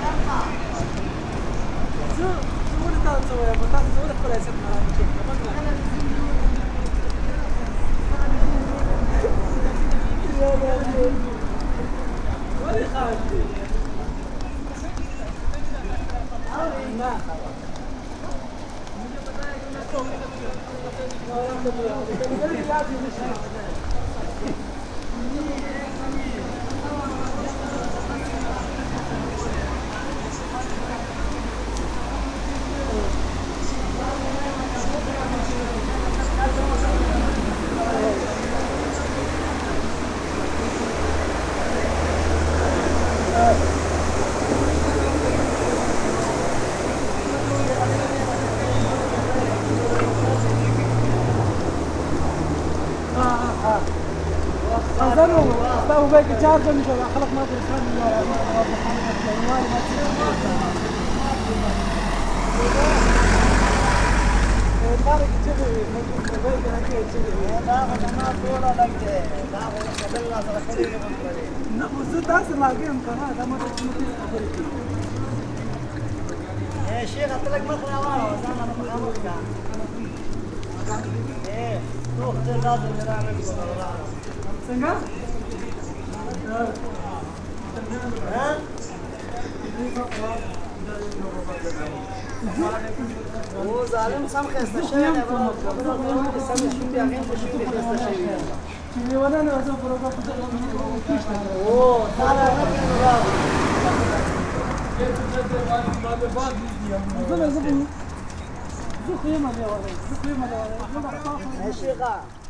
Thalgau, Austria - Walking with refugees III
a group of refugees during a walk from their camp to a church community for an afternoon coffee. This is a regular activity initiated by local volunteers when the first refugees arrived to Thalgau in summer 2015. At the beginning it was mainly Syrians, most of whom meanwhile got asylum and moved to other places, mainly Vienna. The ones remaining are mostly men from Afghanistan and Iraq, who recently got joined by a group from Northern Africa. According to Austria’s current asylum policy they barely have a chance to receive asylum, nevertheless the decision procedure including several interviews often takes more than a year. If they are lucky, though, they might receive subsidiary protection. Despite their everyday being dertermined by uncertainty concerning their future, they try to keep hope alive also for their families often waiting far away to join them some day.
During the last year, the image of refugees walking at the roadside became sort of a commonplace in Austria.